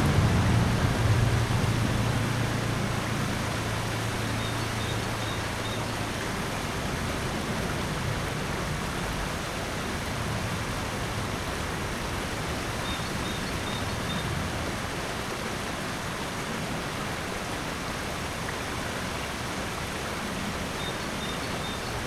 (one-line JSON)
{"title": "wermelskirchen, talsperre: freibad remscheid, eschbach - the city, the country & me: remscheid lido, eschbach creek", "date": "2011-05-08 11:03:00", "description": "bridge over eschbach creek at remscheid lido nearby a weir\nthe city, the country & me: may 8, 2011", "latitude": "51.16", "longitude": "7.22", "altitude": "230", "timezone": "Europe/Berlin"}